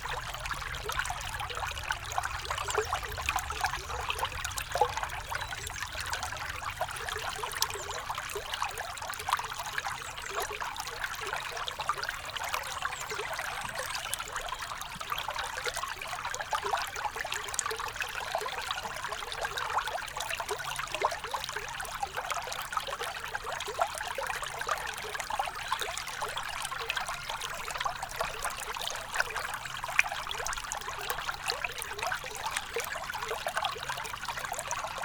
Walhain, Belgique - The river Orne
Recording of the river Orne, in a pastoral scenery. The Sart stream and the camping d'Alvaux ambience.
Recorded with Audioatalia microphones in front of the water.
Walhain, Belgium, 10 April, 16:30